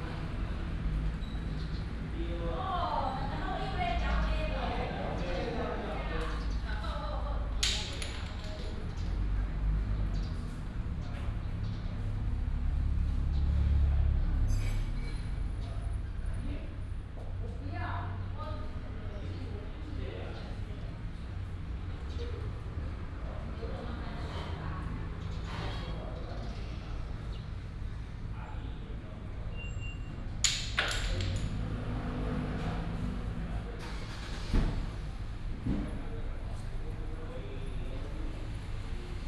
溪湖福安宮, Xihu Township - In the temple
In the temple, Traffic sound
Changhua County, Taiwan, 6 April 2017